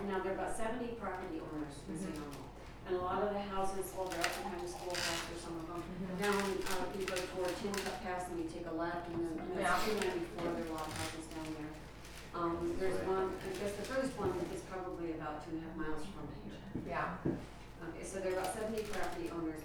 neoscenes: tea in St. Elmo
CO, USA